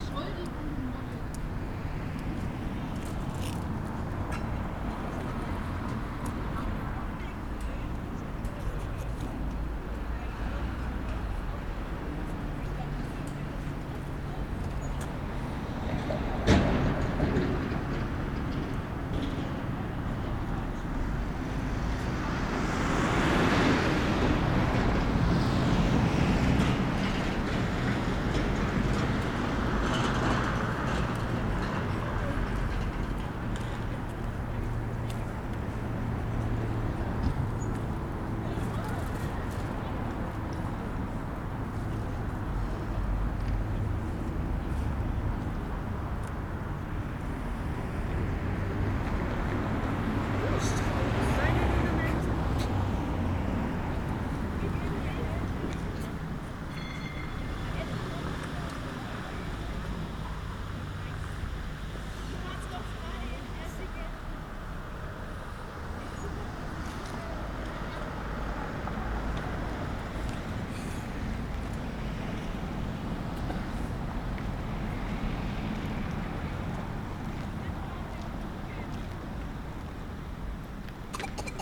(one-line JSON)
{"title": "Am Stadtpark, Schkeuditz, Deutschland - rathaussplatz schkeuditz", "date": "2018-02-12 17:42:00", "description": "Menschen, Autos, Straßenbahnendhaltestelle, etc. Aufgenommen am 12.2.2018 am späten Nachmittag. Aufnahme bei einem Soundwalk im Rahmen eines Workshops zu Klangökologie. Mit Beeke, Greta und Selma. ZoomH4n + RødeNT5", "latitude": "51.40", "longitude": "12.22", "altitude": "110", "timezone": "Europe/Berlin"}